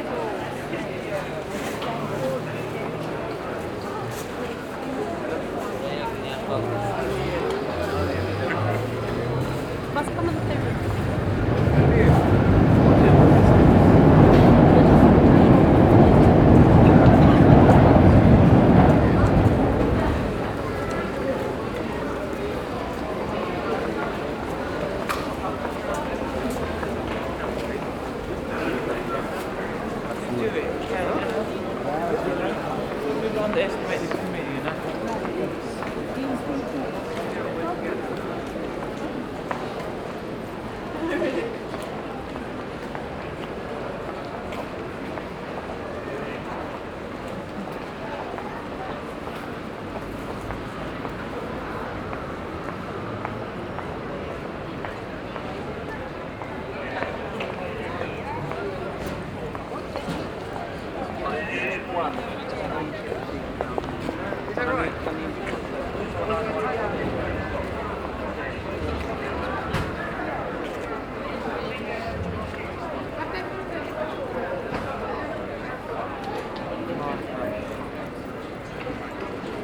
A memorial for a trader who was killed at his stall in Brixton market
from: Seven City Soundscapes